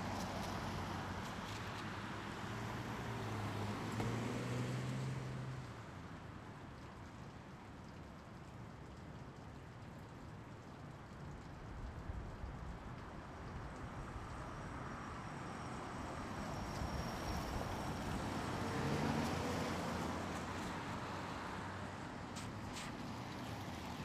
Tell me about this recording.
most of thepedestrian signals in downtown Oakland go on 24/7 /that is about every 90sec. or so, making life of "sound sensitive" people living nearby very interesting - as a part of most annoying sounds - part 2.